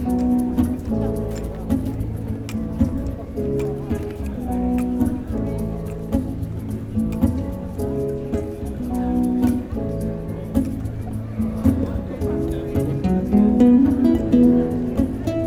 An unknown girl busker on the High Street, a popular spot for street artists. MixPre 6 II 2 x Sennheiser MKH 8020s + Rode NTG3
West Midlands, England, United Kingdom, 2 August 2019